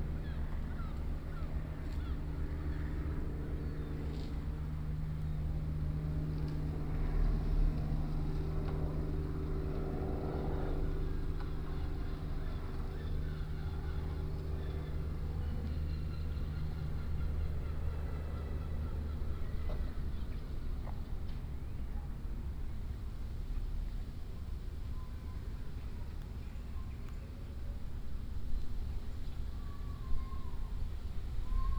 klein vliegtuig trekt zweefvliegtuig
vliegtuigje in de verte trekt zweefvliegtuig
Leiden, The Netherlands, September 2011